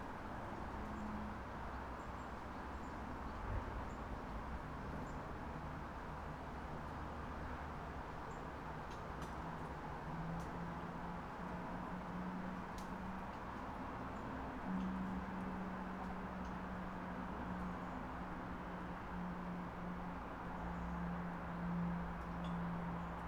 under walnut tree, river Drava areas, Maribor - squirrel, accompanied with distant traffic hum
Maribor, Slovenia, 30 August 2013